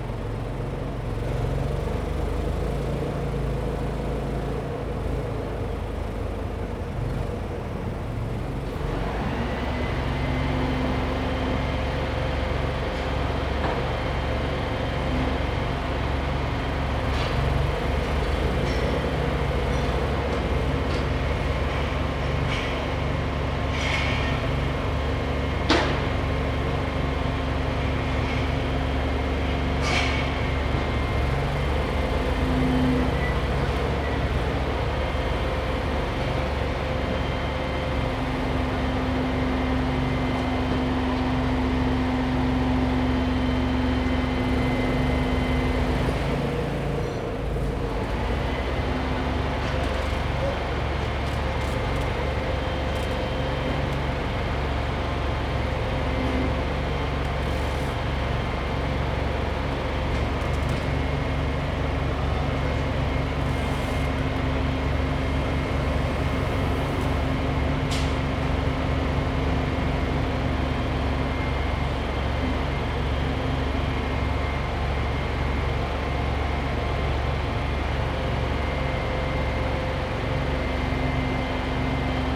Lower East Side, New York, NY, USA - Early morning Stanton St
Early morning activity, 5am, Stanton St NYC.
13 April 2015, 05:00